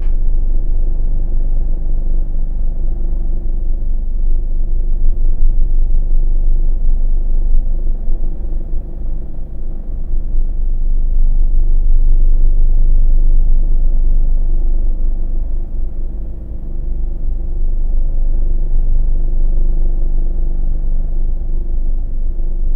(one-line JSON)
{"title": "Old Town, Prague-Prague, Czech Republic - holy ghost", "date": "2016-03-25 14:00:00", "description": "holy ghost in CAS' class room", "latitude": "50.08", "longitude": "14.41", "altitude": "198", "timezone": "Europe/Prague"}